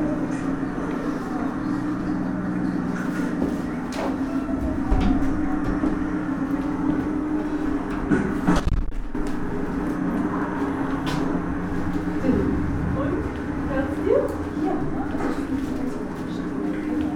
Kalk-Mülheimer Str, Kalk, Köln - Baustelle Kalk, exhibition ambience
Baustelle Kalk is a rising project-space based in Cologne's infamous worker's district Kalk.
It is a place where ideas can develop. Our premises offer the perfect space for innovative concepts and niched culture. We host readings, performances, exhibitions etc. and are proud to support (young) talents from all over the world.
Cologne, Germany, 27 March 2014